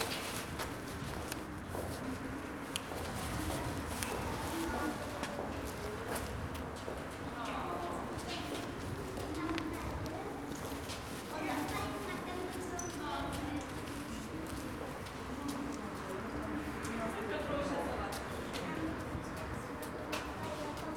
a few people gathered around a ticket machine on a tram stop waiting for their turn to get their ticket.
Poznan, Poland, 2 March 2014, ~12:00